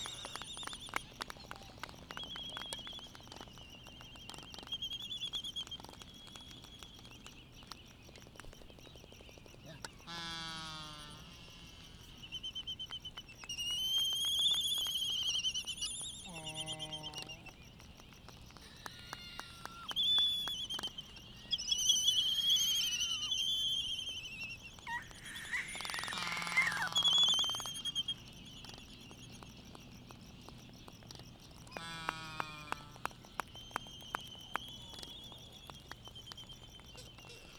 Laysan albatross dancing ... Sand Island ... Midway Atoll ... sky moos ... whinnies ... yaps ... whistles ... whinnies ... the full sounds of associated display ... lavalier mics either side of a furry table tennis bat used as a baffle ... calls from bonin petrels ... warm with a slight breeze ...
United States, 14 March